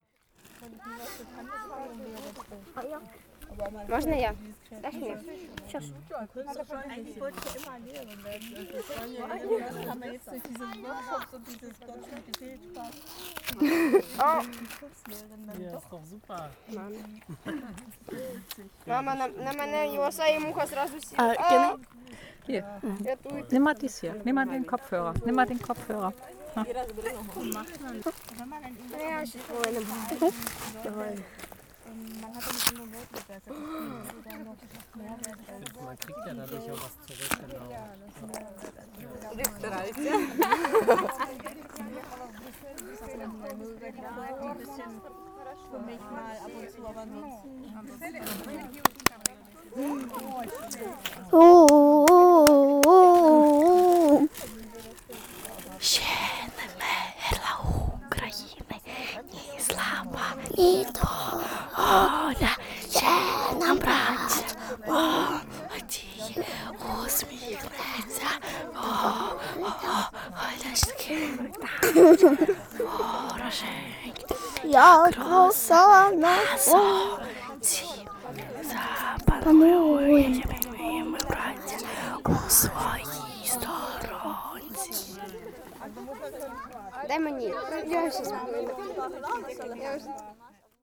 Heessener Wald, Hamm, Germany - playing with mic and headphone
during the picknick we explore mic and headphone further...